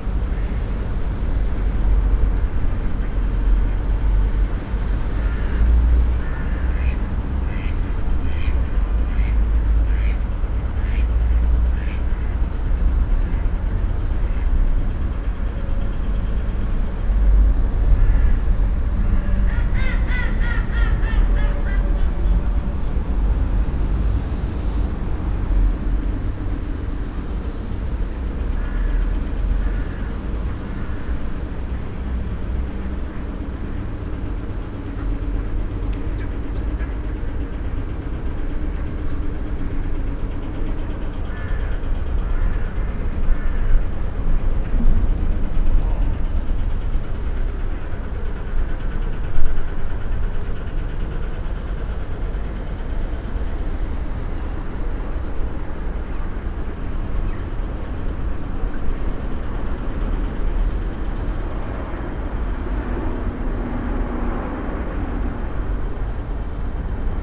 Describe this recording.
At a small river nearby an old bridge. The sound of ducks on the water and motor sounds from a boat and a plane in the distance. international city scapes - topographic field recordings and social ambiences